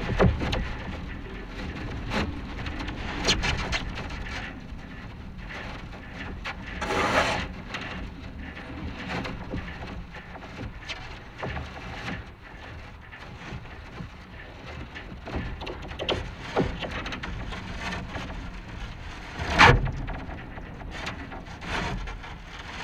A. Juozapavičiaus pr., Kaunas, Lithuania - Two flagpoles
Contact microphone recording of two flagpoles standing next to each other. Four microphones were attached to the cables that are holding the flags, that are highly transmissive of every tiny movement of the flag. Changing direction and strength of the wind results in a vast variety of micro movements, resulting in a jagged and ever-changing soundscape. Recorded using ZOOM H5.